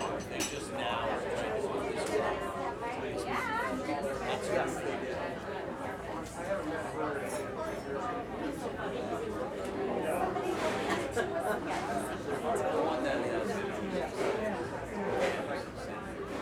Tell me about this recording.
The sounds of lunch time at Donatellis